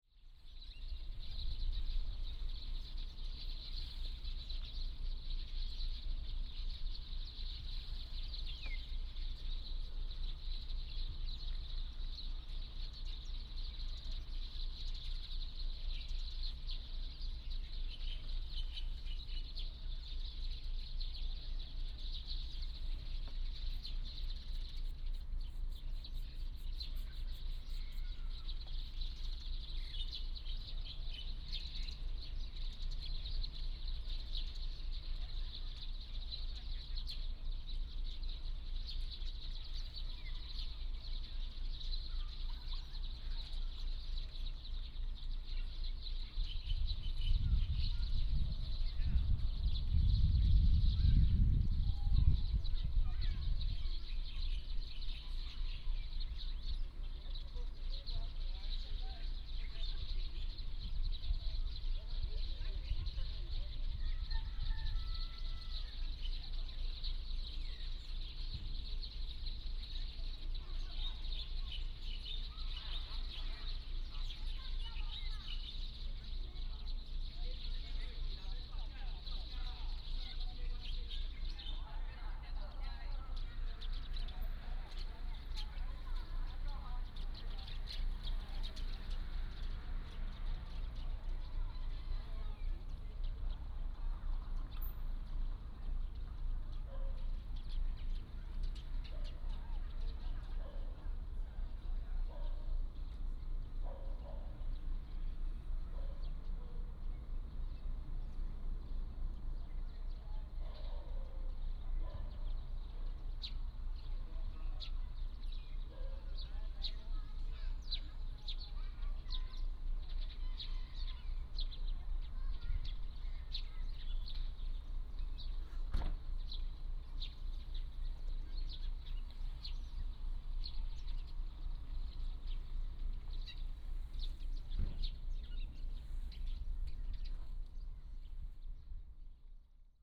Small village, Birds singing, Crowing sound, Dogs barking, A group of elderly tourists